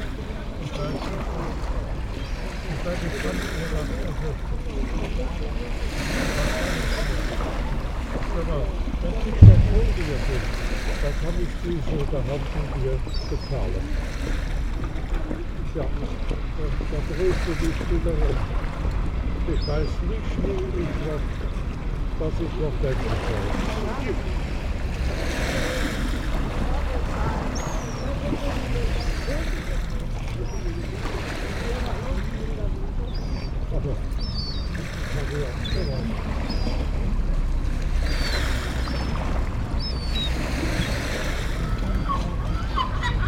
Rovinj, Croatia - seagulls and old people
seagulls searching for leftovers from the fish market, old people small talks, waves
29 December